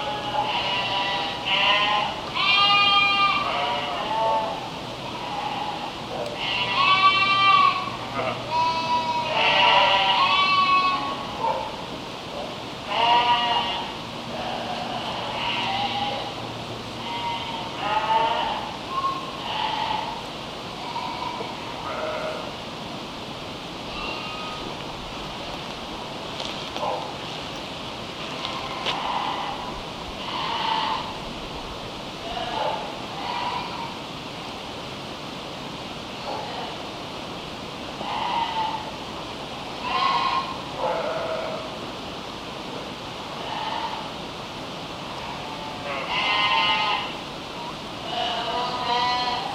Vigneulles, In front of the cemetery at night
Vigneulles, at night, a minuscule cemetery at the top of the village, on the way to RosiÃ¨res, and few mad sheeps talking.
Vigneulles, France